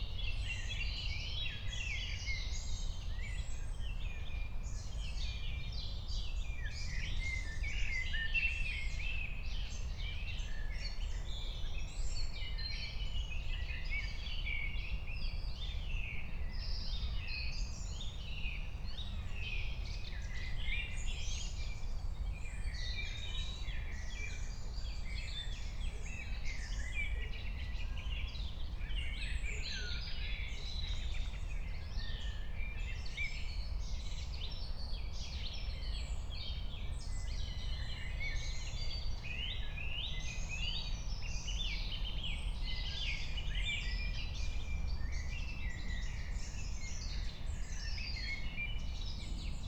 21:01 Berlin, Königsheide, Teich - pond ambience